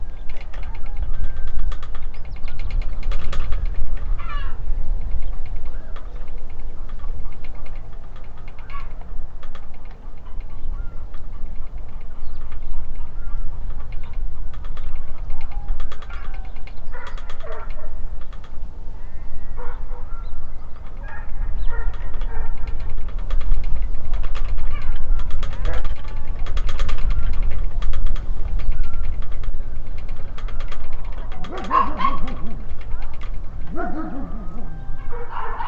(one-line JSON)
{"title": "Village Atmosphere, Inwałd, Poland - (762 XY) Trembling lamppost", "date": "2021-04-24 13:35:00", "description": "Stereo recording of a distant village atmosphere from a perspective of a trembling lamppost on a windy day.\nRecorded with Rode NT4 on Sound Devices MixPre6 II.", "latitude": "49.87", "longitude": "19.41", "altitude": "308", "timezone": "Europe/Warsaw"}